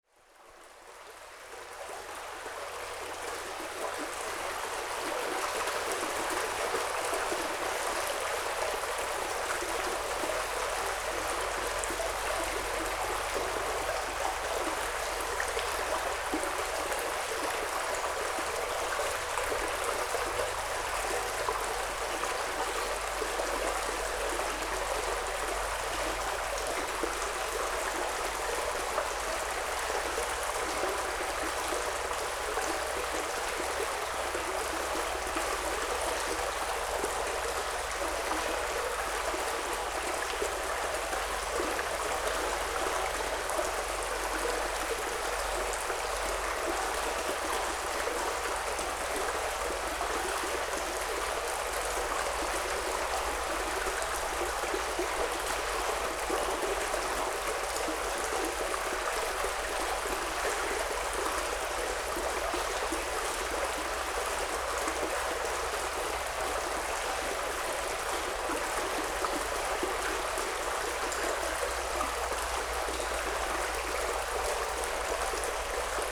small river in the tube under the road. some low tones heard - its traffic of distant magistral road